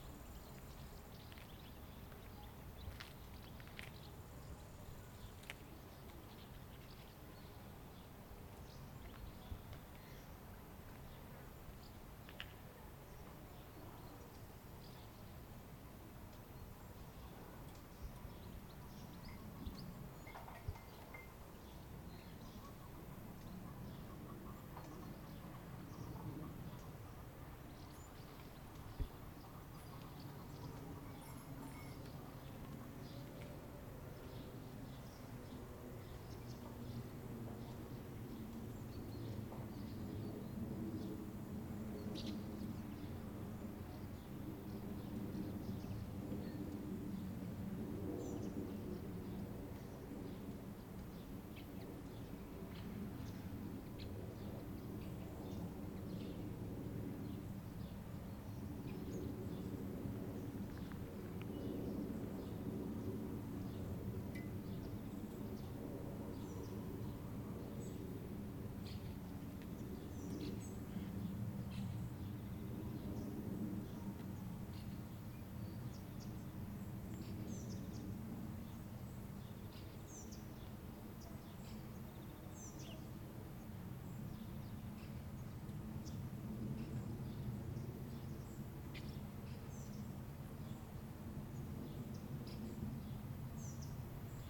Small vilage Bílka near Milešovka hill. Footsteps, distant geese, grasshoppers and birds chirping.
Zoom H2n, 2CH, handheld.